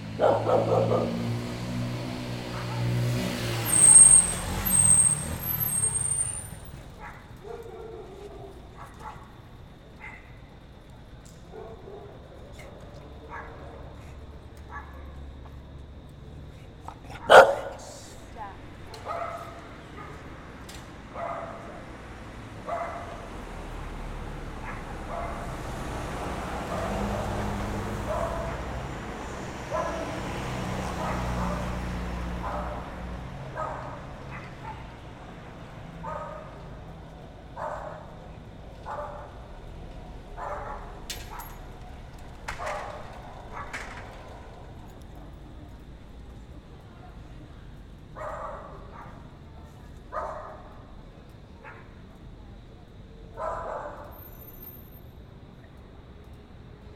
Cl., Medellín, El Poblado, Medellín, Antioquia, Colombia - Apartamentos Acquavella
Se aprecia el flujo de vehículos y personas al frente del Edificio Acquavella
12 September 2022